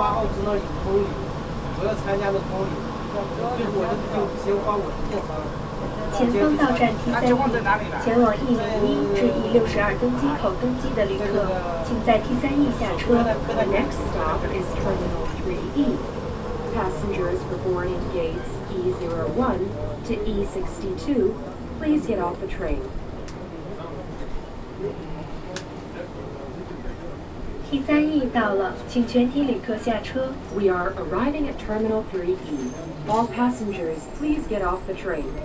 Chaoyang, Pekin, Chiny - Underground railway to national airport
Underground railway transport from national to international airport